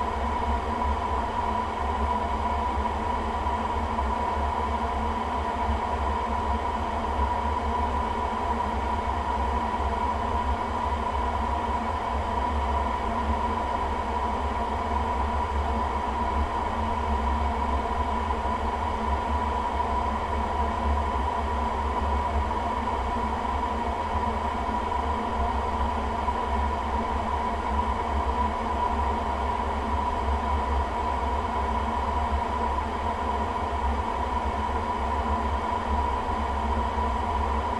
opencast / Tagebau Hambach, near Elsdorf, Germany - water pipe, drainage
Regierungsbezirk Köln, Nordrhein-Westfalen, Deutschland, July 2013